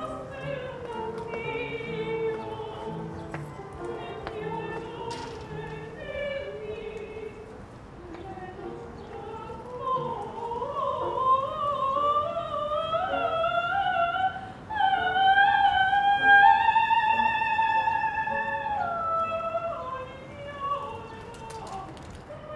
Valparaíso - Singing and piano rehearsal recorded from the street
In the street of Valparaiso (Chile), Ive been recording some music rehearsal (singing and piano) coming from inside a house trough the open window.